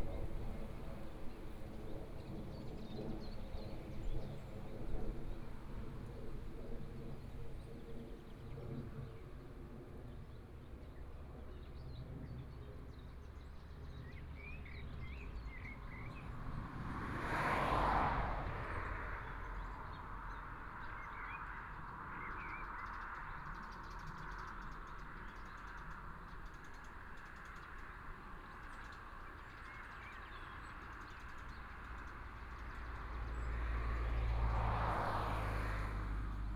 Petershauser Straße, Hohenkammer - At the roadside
At the roadside, Birdsong, Traffic Sound